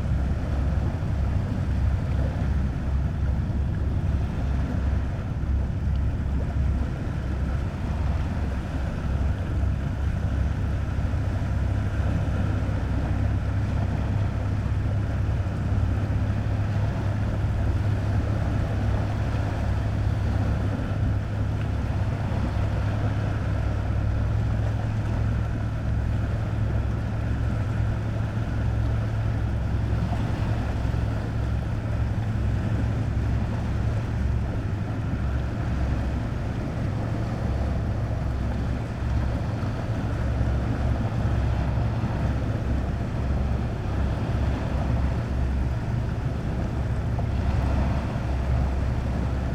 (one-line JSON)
{"title": "late aftrenoon sea, Novigrad - while reading, silently", "date": "2014-07-17 19:49:00", "latitude": "45.32", "longitude": "13.55", "timezone": "Europe/Zagreb"}